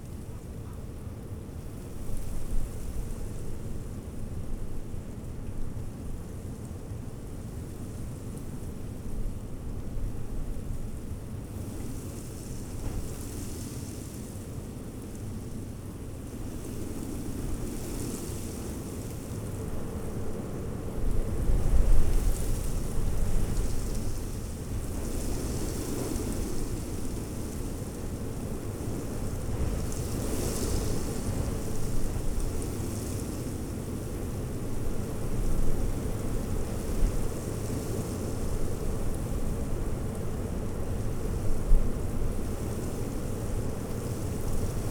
dry reed stirred by the wind during storm
the city, the country & me: march 7, 2013